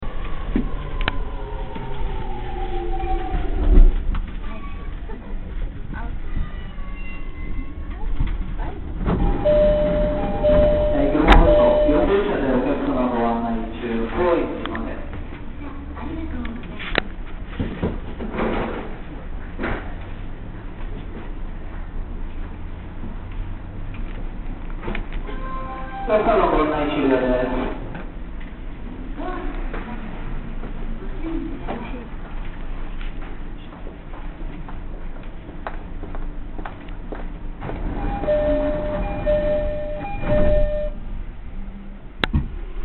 JR Line at 7 p.m. /17.12.07
Yoyogi, １丁目３５−７